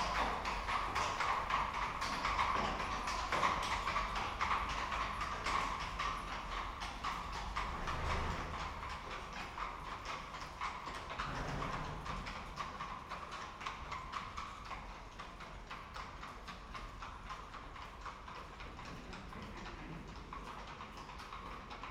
Gasse, Salzburg, Österreich - Pferdekutsche
Das Quietschen kommt von der Bremse der Kutsche.